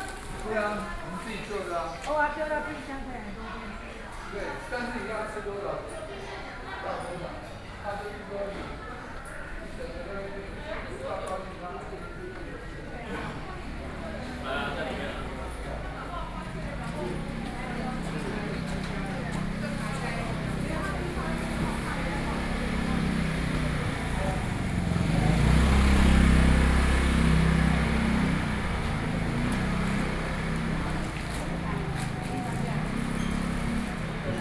Jingmei St., Wenshan Dist. - Traditional markets